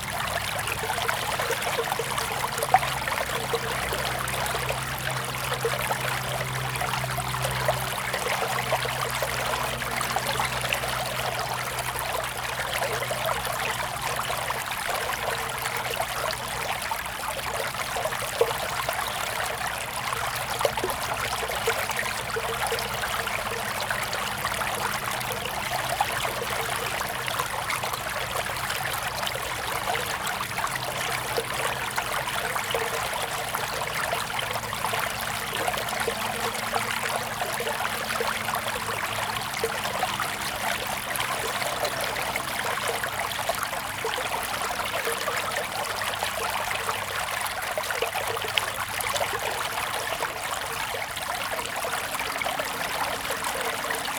Agricultural irrigation channels
Zoom H2n MS+XY